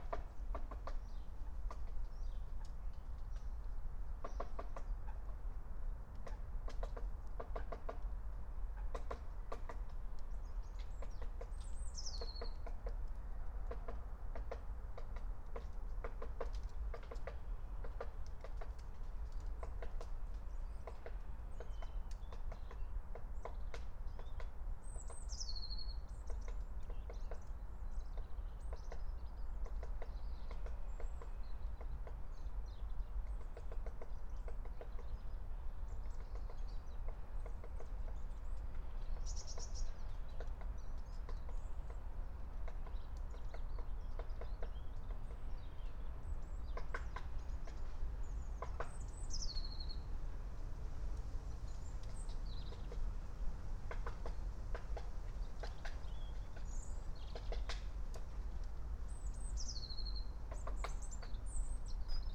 09:31 Berlin, Alt-Friedrichsfelde, Dreiecksee - train junction, pond ambience